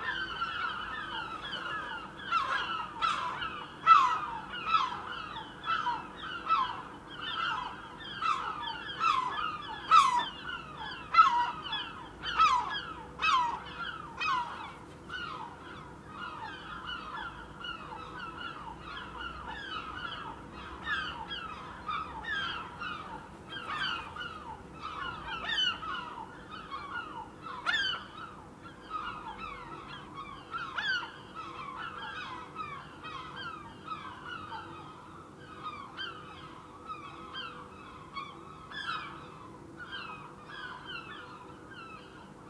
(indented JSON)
{
  "title": "Osborne Rd, Brighton, Vereinigtes Königreich - Brighton - Osborne Road - House backyard",
  "date": "2022-03-16 10:00:00",
  "description": "In the backyard on an early spring morning. The cold lush wind and the sound of seagulls.\nsoundmap international:\nsocial ambiences, topographic field recordings",
  "latitude": "50.85",
  "longitude": "-0.14",
  "altitude": "100",
  "timezone": "Europe/London"
}